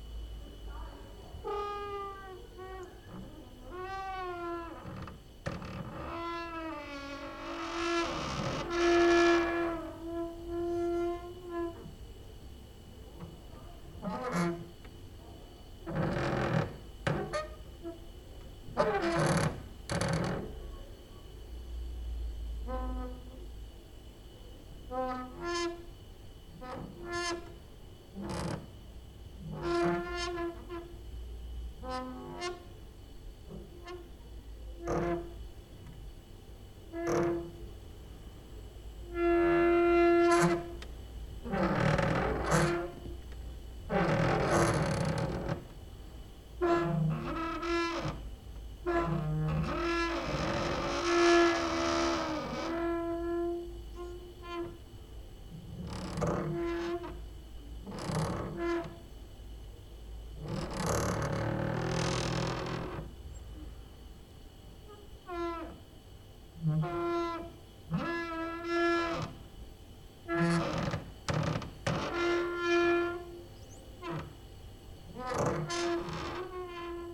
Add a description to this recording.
no cricket ... just fridge inside and distant human voices outside ... exercising creaking with already tired wooden doors inside